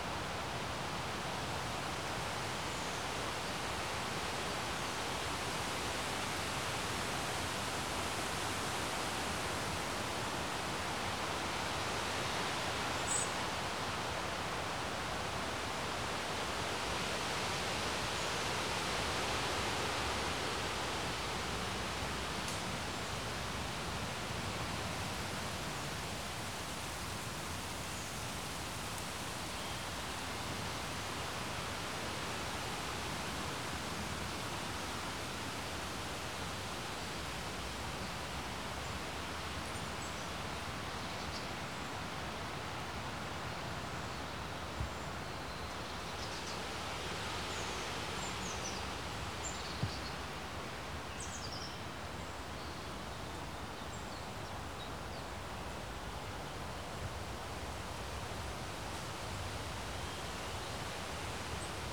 a stop at a quiet pond covered with duckweed and surrounded by thick bushes. big, dense trees pouring a rich, fading swoosh with thousands of their small leaves. sparse bird chirps, a rooster from a nearby farm. at one point a shriveled leaf fell down on the recorder.
Poznan, Poland, 22 June, 12:14